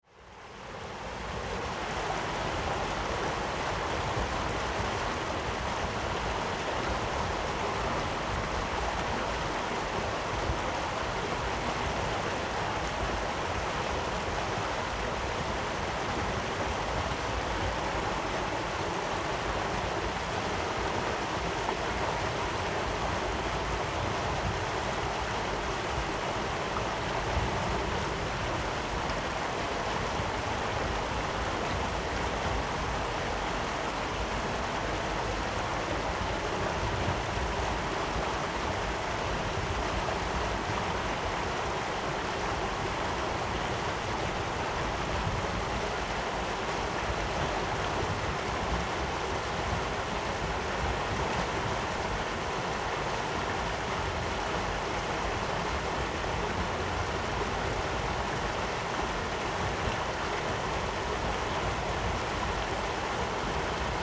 Irrigation waterway, The sound of water, Streams waterway, Very hot weather
Zoom H2n MS+ XY
Taitung County, Taiwan